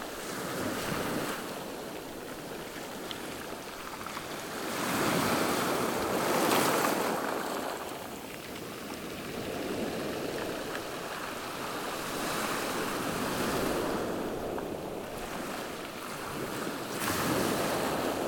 Ars-en-Ré, France - The Kora Karola beach on Ré island
Recording of the sea during one hour on the Kora Karola beach. It's high tide. Waves are big and strong. Shingle are rolling every wave.